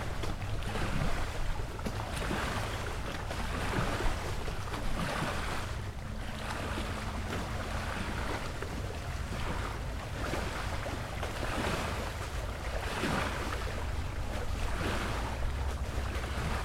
Three Pines Rd., Bear Lake, MI, USA - Open Water Dynamics (WLD2015)
Waves from wind, motor boats and jetskis hit the north shore of Bear Lake. As heard from the top of steps leading down to water's edge. Stereo mic (Audio-Technica, AT-822), recorded via Sony MD (MZ-NF810).